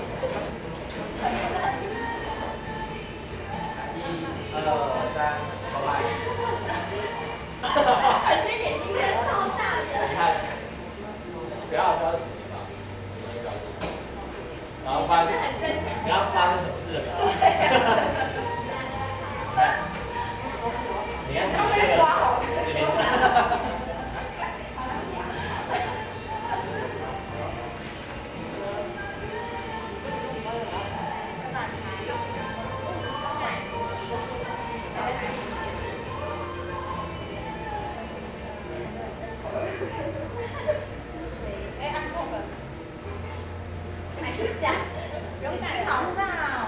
Kaohsiung, Taiwan - In the restaurant
In the restaurant, The sound of a group of students talking, Sony Hi-MD MZ-RH1, Sony ECM-MS907
高雄市 (Kaohsiung City), 中華民國, 25 February 2012, 14:31